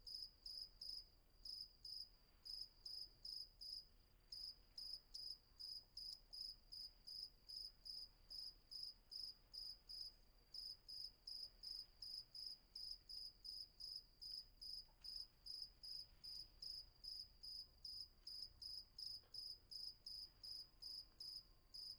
Patmos, Vagia, Griechenland - Nachtsimmung 03, Grillen